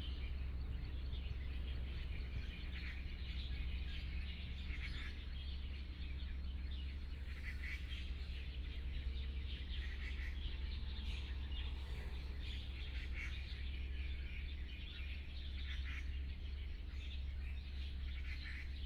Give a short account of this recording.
Under the bridge, Birdsong Traffic Sound, Train traveling through